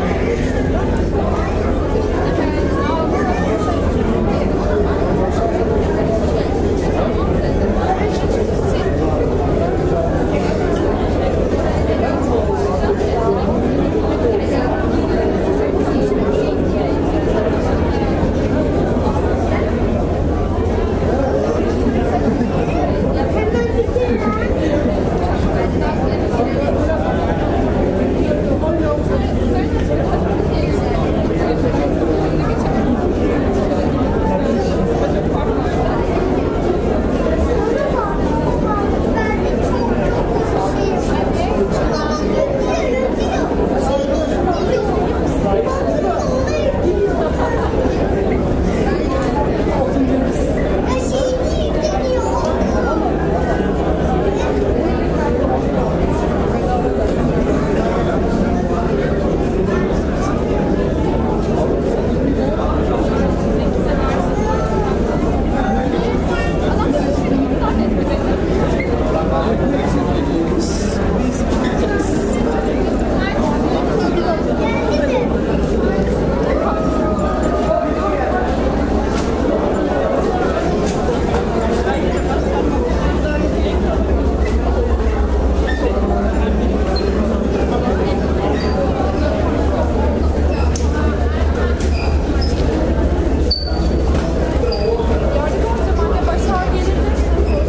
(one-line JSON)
{"title": "Kadiköy ferry terminal, waiting crowd", "date": "2010-09-17 17:11:00", "description": "Istanbul is very dense. You walk and you sit and you stand among as many people as the space around you can bear. This is the ferry terminal in Kadıköy, bearing a very compressed quantity of people waiting to get on the boat.", "latitude": "40.99", "longitude": "29.02", "altitude": "1", "timezone": "Europe/Istanbul"}